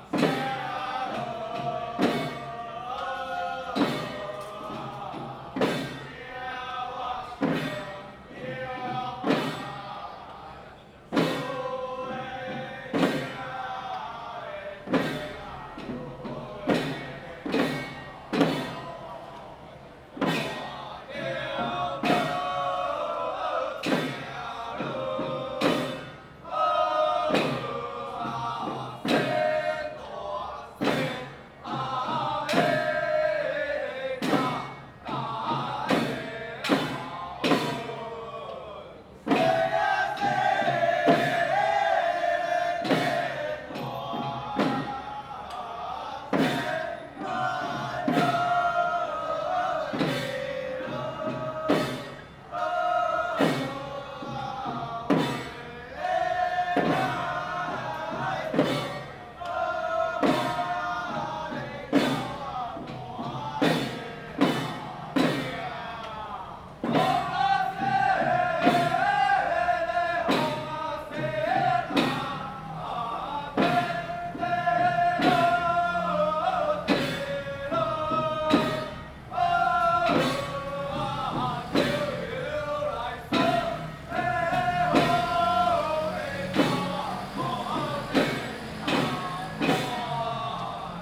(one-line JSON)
{"title": "Daren St., Tamsui Dist., 新北市 - Traditional troupes", "date": "2015-06-20 16:36:00", "description": "Traditional festival parade, Traditional troupes\nZoom H2n MS+XY", "latitude": "25.18", "longitude": "121.44", "altitude": "45", "timezone": "Asia/Taipei"}